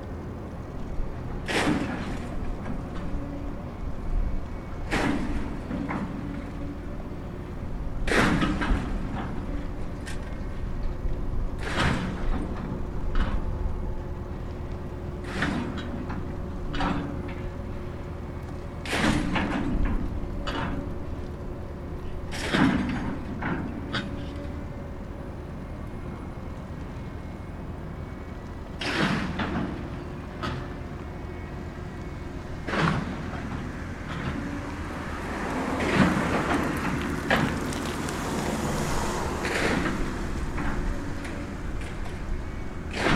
herne-wanne - schrottverladung am rhein-herne-kanal